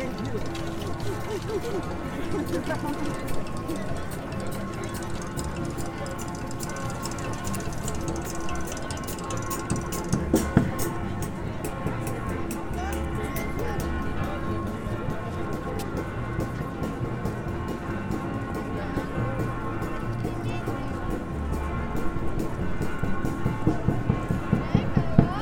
Prague - Old Town Square, Prague
The Old Town Square, Prague.